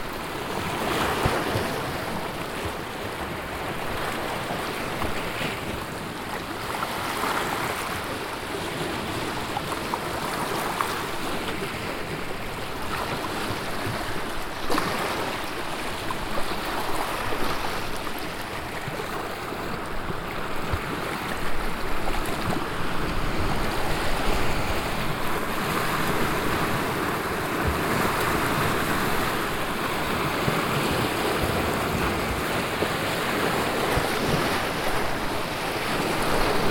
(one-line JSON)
{"title": "Kariba Lake, Sinazongwe, Zambia - windy morning at Kariba...", "date": "2016-08-10 10:16:00", "description": "...in the middle of the night I had already heard the rigs go home and the winds picking up… a night/ day of bad business for the kapenta rigs…\nhowever, this recording became something like our signature sound during the Zongwe FM broadcasts of women across the lake…", "latitude": "-17.26", "longitude": "27.48", "altitude": "487", "timezone": "GMT+1"}